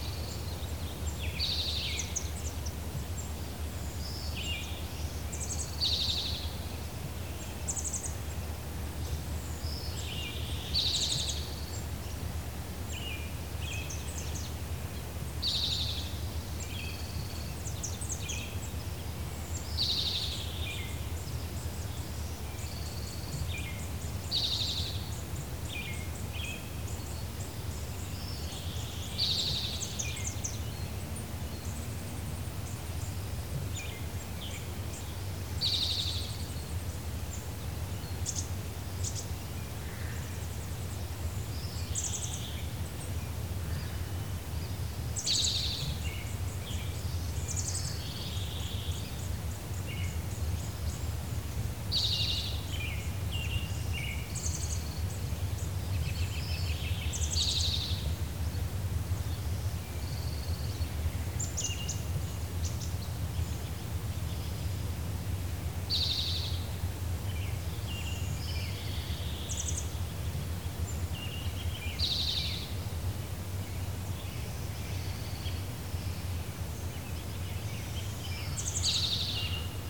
Sherwood Forest - Quiet Morning
Some people are night owls, some are early birds -- it's genetic; you can't help what you are. I find the early morning wonderfully fresh and inviting, with the trees full of life in the morning sun -- and very little else moving.
Major elements:
* Birds (crows, starlings, chickadees, seagulls, finches, an owl, a woodpecker, and several others I can't identify)
* Cars and trucks
* Airplanes (jet and prop)
* Dogs
* A rainshower ends the recording session